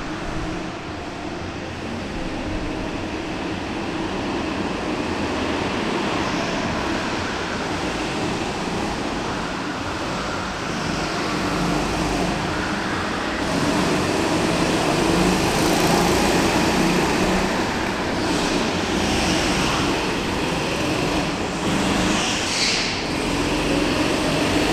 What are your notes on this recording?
two young girls taking a few laps and having fun, the city, the country & me: august 22, 2010